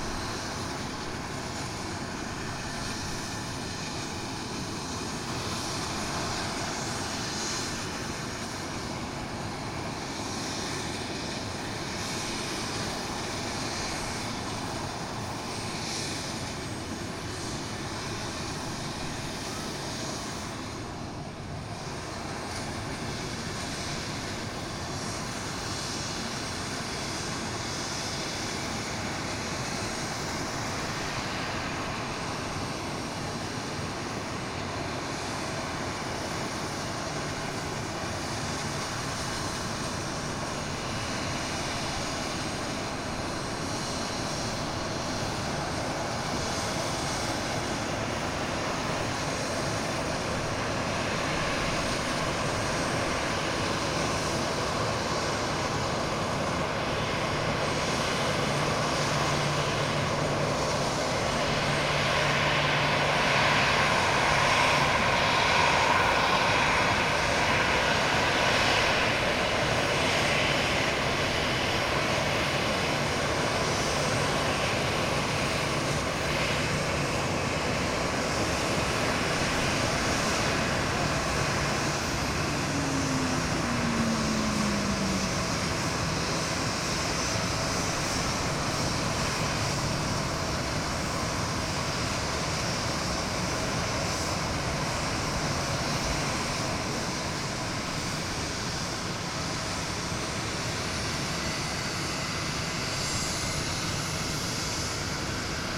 Madeira, airport - observation deck
small jet plane idling on the runaway, making so much noise with its jet engines it basically drowns all other surrounding sounds. passengers on the observation deck are not able to hear the announcements. a TAP flight will land any minute and there is a lot of commotion on the airfield getting ready for handling the incoming flight. The plane lands at some point but still the small jet plane is louder. You can hear the difference after it takes off around 5th minute of the recording.
Portugal